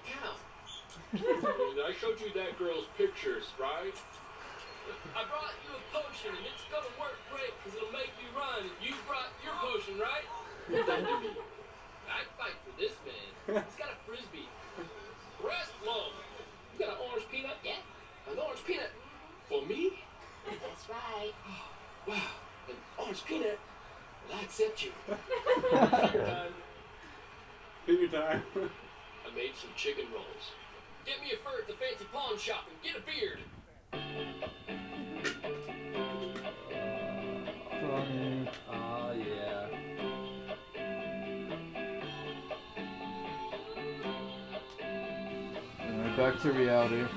Qualla Dr. Boulder CO - PWR OUT!